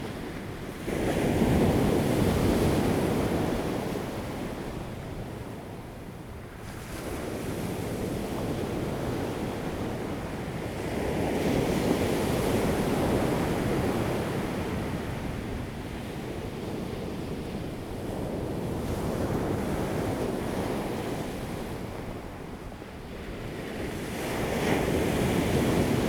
南田村, Daren Township - Sound of the waves
Sound of the waves, The weather is very hot
Zoom H2n MS +XY
Taitung County, Daren Township, 台26線, 5 September, 14:06